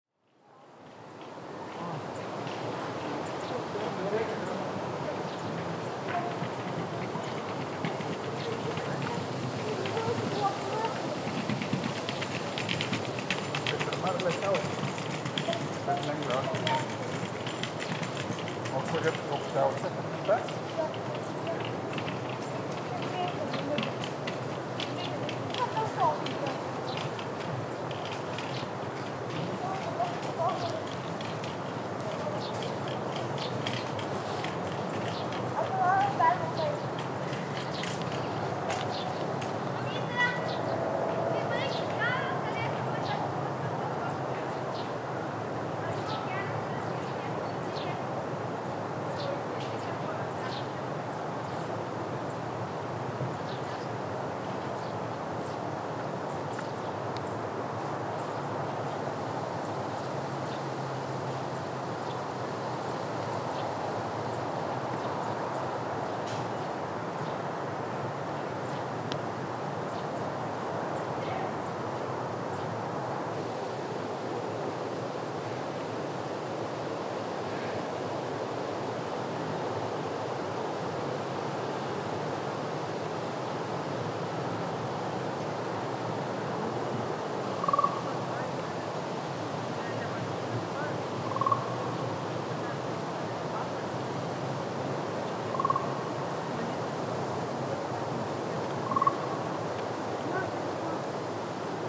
Neringos Lighthouse, Lithuania - Lighthouse
Recordist: Saso Puckovski. The recorder was placed about 20m to the right of the lighthouse on the ventilation unit. Other sounds include random tourists passing, frogs in the distance. Calm weather, light wind, sunny day. Recorded with ZOOM H2N Handy Recorder, surround mode.